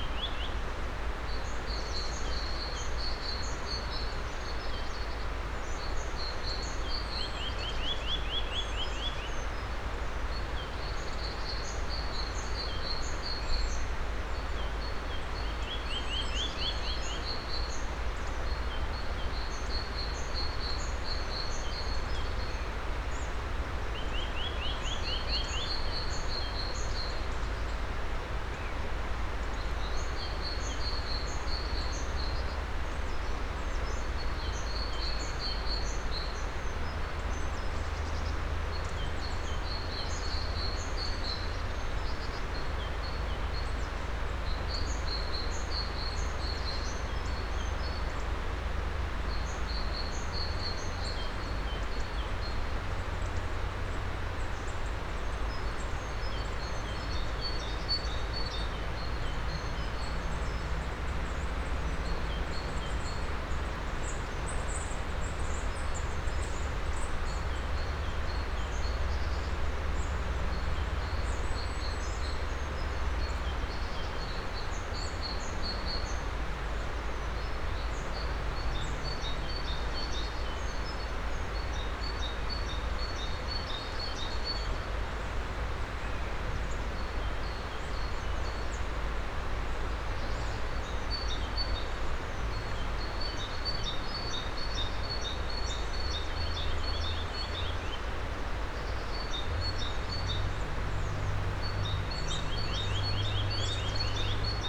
Kerkerbachtal between villages Hofen and Eschenau, late Winter, early spring birds, sound of the Kerkerbach creek
(Sony PCM D50, Primo EM272)